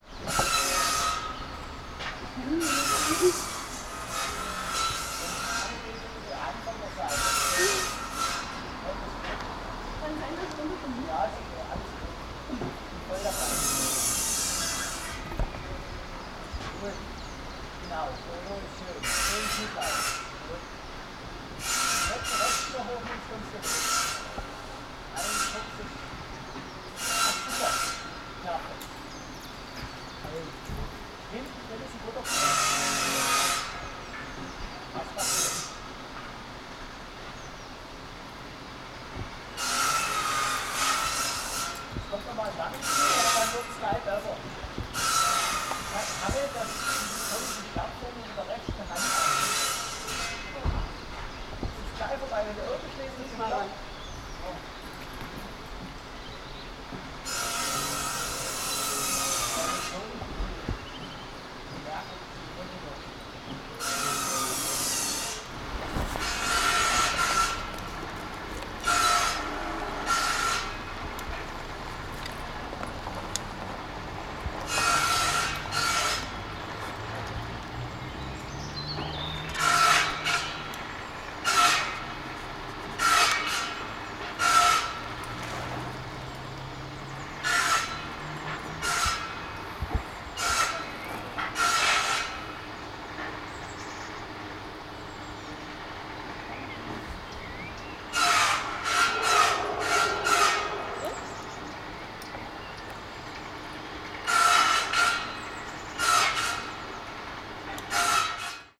Liebethaler Grund, Pirna, Deutschland - Sawing wood on circular saw
everyday village noise, Sawing wood on circular saw